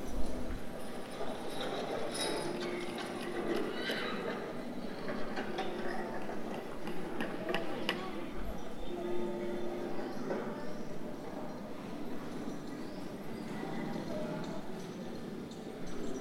El Jazzar St, Acre, Israel - Knights Hall Acre
Knights Hall Acre